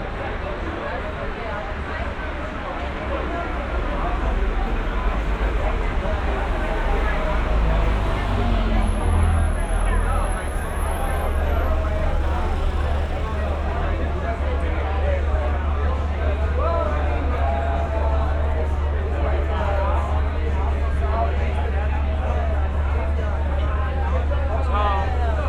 {"title": "berlin, sonnenallee: vor o tannenbaum - the city, the country & me: in front of pub o tannenbaum", "date": "2012-06-07 01:00:00", "description": "traffic noise, noise from inside the pub and a short intervention by francesco cavaliere\nthe city, the country & me: june 7, 2012", "latitude": "52.49", "longitude": "13.43", "altitude": "43", "timezone": "Europe/Berlin"}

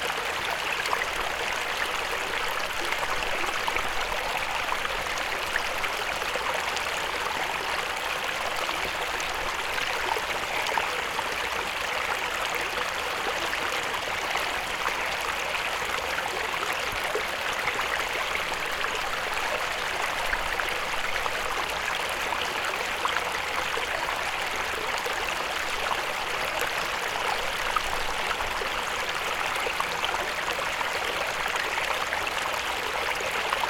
{"title": "Piechowice, Poland - (887) Mountain brook", "date": "2022-02-16 13:00:00", "description": "Recording from a stone in the brook.\nRecorded with Olympus LS-P4.", "latitude": "50.83", "longitude": "15.55", "altitude": "557", "timezone": "Europe/Warsaw"}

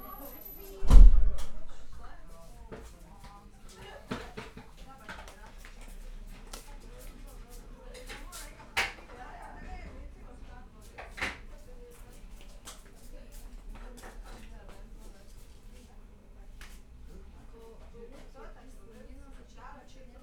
{"title": "Maribor, China shop - preparation of lunch", "date": "2012-07-31 14:25:00", "description": "preparation of lunch, during Polenta festival, in Natascha's China Shop, a place for artistic and other activities. during the polenta festival, people gather here all day in a friendly athmosphere.\n(SD702 DPA4060)", "latitude": "46.56", "longitude": "15.64", "altitude": "262", "timezone": "Europe/Ljubljana"}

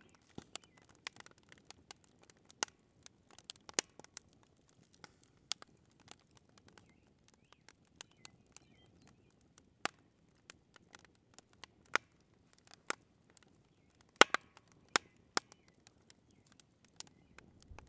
{"title": "Buryanek State Recreation Area - Camp Fire", "date": "2022-06-25 20:55:00", "description": "Recording of a camp fire at the campground in the Burynanek State Recreation area.", "latitude": "43.42", "longitude": "-99.17", "altitude": "417", "timezone": "America/Chicago"}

